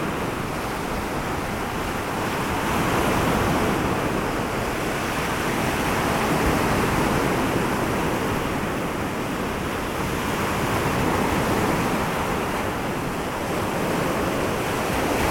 Reling, Kiel, Deutschland - Wave breaking
On the shore with some strong wind and breaking waves, freezing cold and no other people around. Binaural recording with Sennheiser Ambeo Smart Headset, flurry wind shield (like regular headphones), iPhone 8 plus, Voice Record Pro.